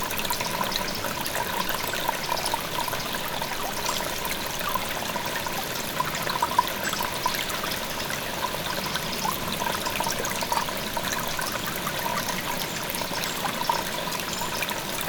Steinbach, babbling brook, WLD - Steinbach, babbling brook
Steinbach, babbling brook, WLD